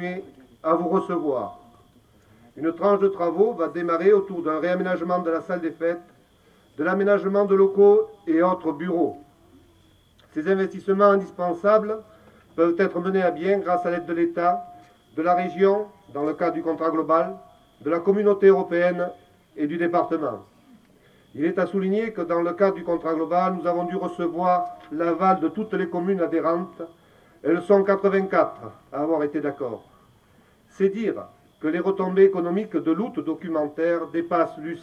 Lussas, Etats Généraux du documentaire 1999, Mayors opening speech
Lussas, France, 15 August 1999, ~21:00